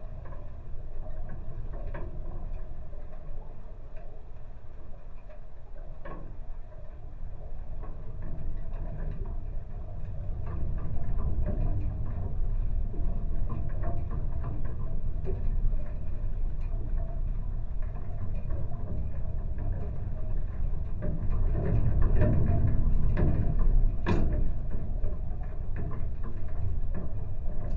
Utena, Lithuania, fence at the dam
contact microphones and geophone on the metallic fence at the flooded dam
Utenos apskritis, Lietuva